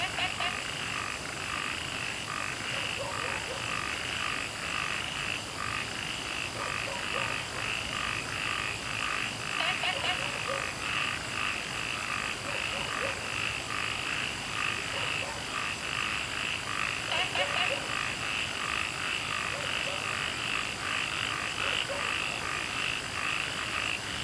茅埔坑溪生態公園, 埔里鎮桃米里 - Frogs chirping
Frogs chirping, Dogs barking, in the Wetland Park
Zoom H2n MS+XY
Puli Township, 桃米巷11-3號, 10 August 2015